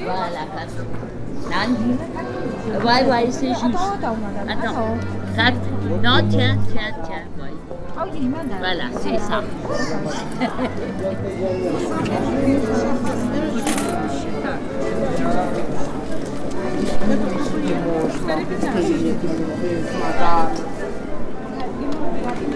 Straßbourg, Place de la Cathedrale, Frankreich - In front of the cathedral's entrance
In front of the entrance of the cathedral: Beggars wishing a nice sunday and asking for money, church visitors passing, a musician playing accordeon, a lady selling boxwood twigs for Palm Sunday.